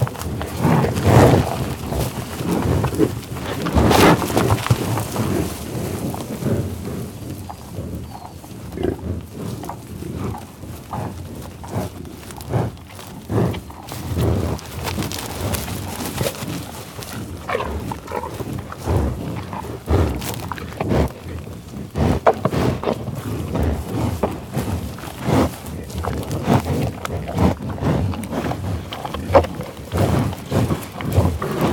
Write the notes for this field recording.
At the end of the day, the buffalos came to eat some food the worker of the park gave to them with his truck. The bisons came really close to us. Sound recorded by a MS setup Schoeps CCM41+CCM8, Sound Devices 788T recorder with CL8, MS is encoded in STEREO Left-Right, recorded in may 2013 in the Tallgrass Prairie Reserve close to Pawhuska, Oklahoma (USA).